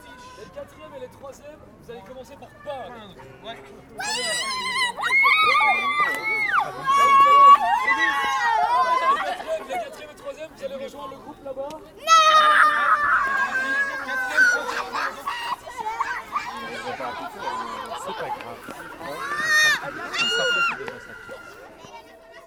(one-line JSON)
{"title": "Court-St.-Étienne, Belgique - Scouting", "date": "2016-04-16 13:05:00", "description": "Scouts will begin a citizen action : they will clean the village from the garbages in the woods. Before activity, they scream the rallying songs.", "latitude": "50.65", "longitude": "4.57", "altitude": "61", "timezone": "Europe/Brussels"}